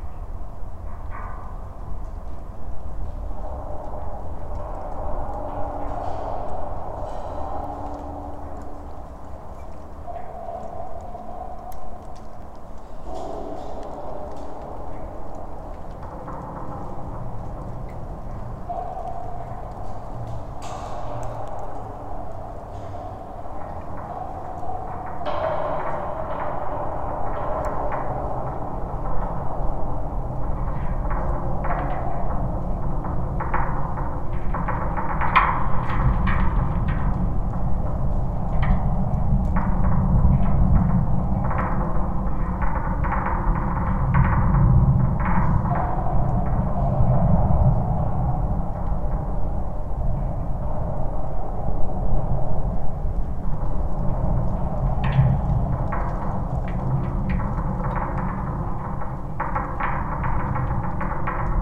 Grybeliai, Lithuania, study of watertower
I ofter return to the favourite listening places. Especially if it is some abandoned object, half decayed buildings, relicts from soviet era.
The abandoned metallic watertower hidden amongst the trees - it is practically unseen in summertime. And it stands like some almost alien monster in winter's landscape.
The sound study. Small omnis placed inside of pipe and contact mics on the body of tower.